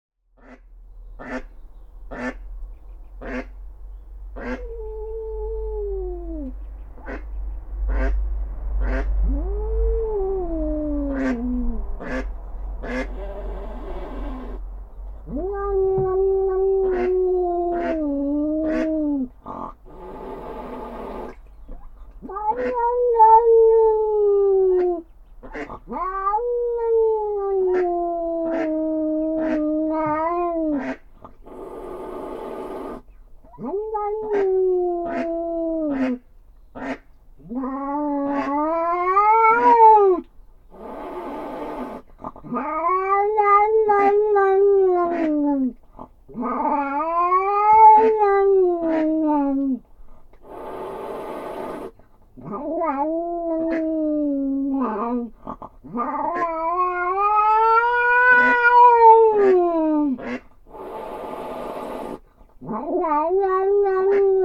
While a hen mallard guards her ducklings in the middle of my pond 2 cats argue. They might have been responsible for the losses to the brood over this week.